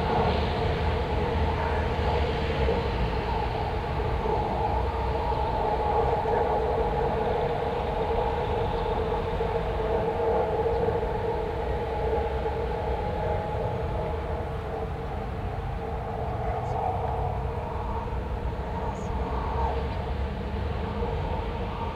Cetatuia Park, Klausenburg, Rumänien - Cluj, Fortress Hill project, water fountain sculptures
At the temporary sound park exhibition with installation works of students as part of the Fortress Hill project. Here the close up recorded sound of the water fountain sculpture realized by Raul Tripon and Cipi Muntean in the third tube of the sculpture. In the background strett traffic.
Soundmap Fortress Hill//: Cetatuia - topographic field recordings, sound art installations and social ambiences